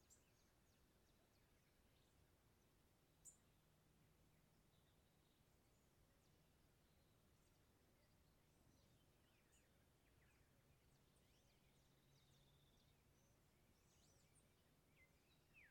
{
  "title": "Apulo, Cundinamarca, Colombia - Singing Birds",
  "date": "2013-01-03 06:15:00",
  "description": "Bird songs during the sunrising. Zoom H2N in XY function at ground level. The recording was taken on Apulo's rural area.",
  "latitude": "4.52",
  "longitude": "-74.58",
  "timezone": "America/Bogota"
}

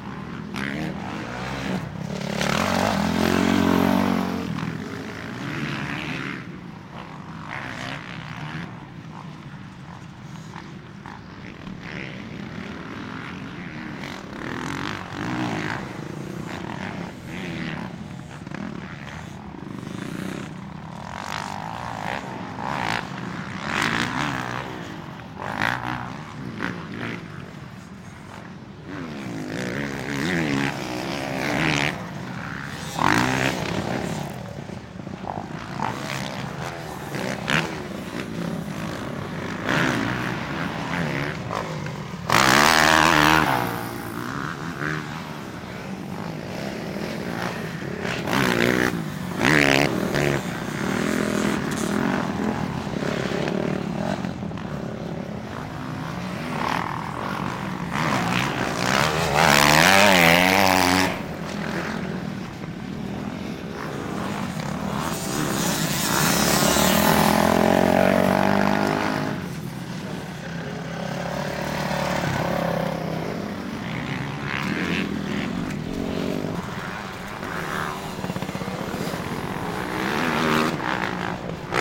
{
  "title": "Joliet MX Park",
  "date": "2010-10-25 11:30:00",
  "description": "Dirt Bikes, MX Park, MX Track, MX, Mortorcycle",
  "latitude": "41.37",
  "longitude": "-88.23",
  "altitude": "155",
  "timezone": "America/Chicago"
}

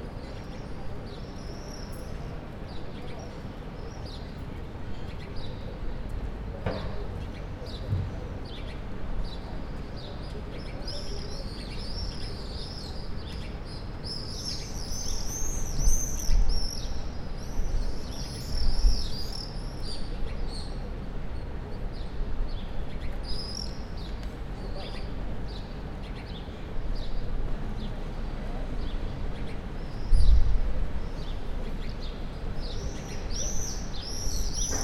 {
  "title": "University Maribor - Slomsek square in the morning",
  "date": "2008-06-06 07:30:00",
  "description": "A busy morning atmosphere.",
  "latitude": "46.56",
  "longitude": "15.64",
  "altitude": "275",
  "timezone": "Europe/Ljubljana"
}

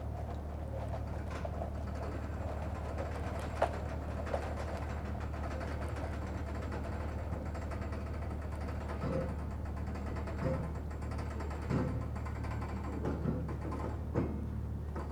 {
  "title": "berlin, plänterwald: spree - the city, the country & me: spree river bank",
  "date": "2014-01-26 16:32:00",
  "description": "workers attach towboat to coal barges, cracking ice of the frozen spree river, promenaders\nthe city, the country & me: january 26, 2014",
  "latitude": "52.48",
  "longitude": "13.50",
  "timezone": "Europe/Berlin"
}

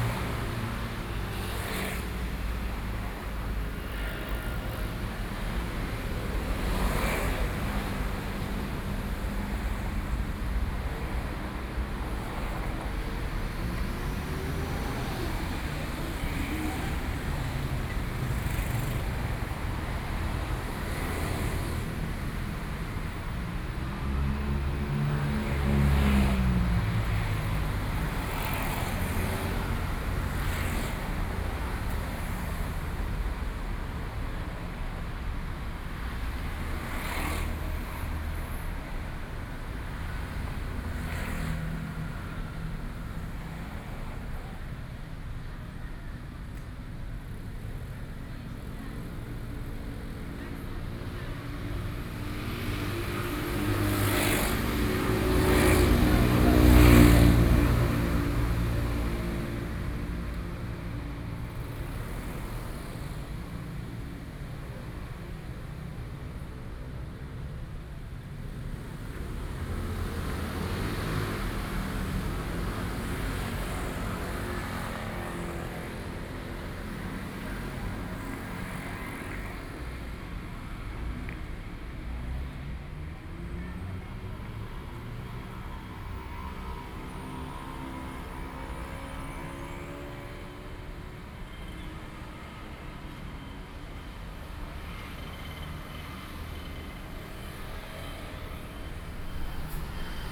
{
  "title": "Beitou, Taipei - Night traffic",
  "date": "2013-07-30 20:50:00",
  "description": "Night traffic, Sony PCM D50 + Soundman OKM II",
  "latitude": "25.13",
  "longitude": "121.50",
  "altitude": "11",
  "timezone": "Asia/Taipei"
}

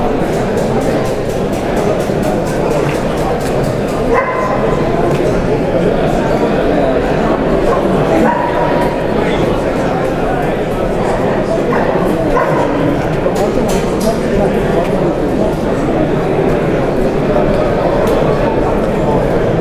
{
  "title": "Tractor Show at The 3 Counties Showground, Malvern, UK - Show",
  "date": "2019-08-03 11:35:00",
  "description": "This crowd is inside a series of large hangers for the exhibition of tractors and farm equipment.\nMixPre 6 II with 2 x Sennheiser MKH 8020s.",
  "latitude": "52.08",
  "longitude": "-2.32",
  "altitude": "64",
  "timezone": "Europe/London"
}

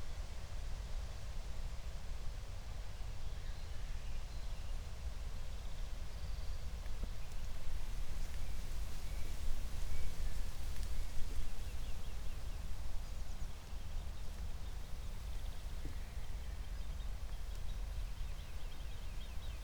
{
  "title": "Berlin, Buch, Mittelbruch / Torfstich - wetland, nature reserve",
  "date": "2020-06-19 19:00:00",
  "description": "19:00 Berlin, Buch, Mittelbruch / Torfstich 1",
  "latitude": "52.65",
  "longitude": "13.50",
  "altitude": "55",
  "timezone": "Europe/Berlin"
}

